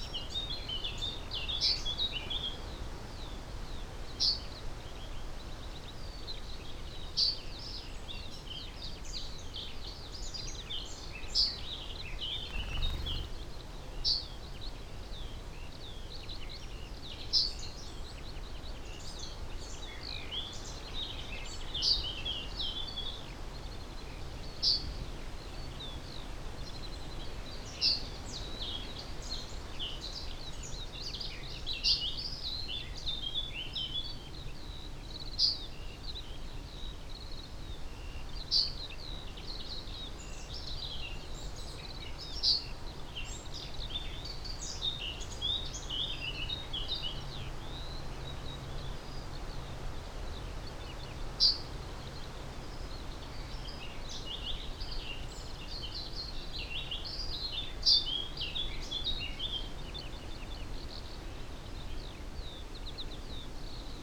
Green Ln, Malton, UK - blackcap song soundscape ...
blackcap song soundscape ... blackcap moving from song post down a hedgerow and back ... SASS ... bird song ... call ... from chaffinch ... yellowhammer ... whitethroat ... corn bunting ... pheasant ... goldfinch ... voice at one point on the phone ...